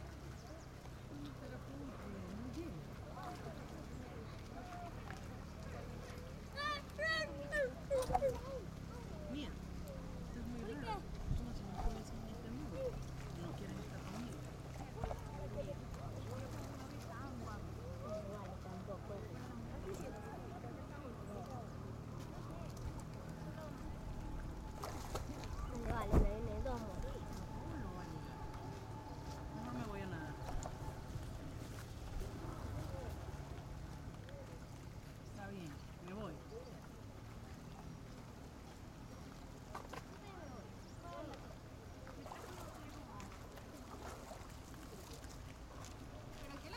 San Jacinto de Buena Fe, Ecuador - At the river, recording.
Ambiance recording from the set: Verano en la Ciudad del Rio.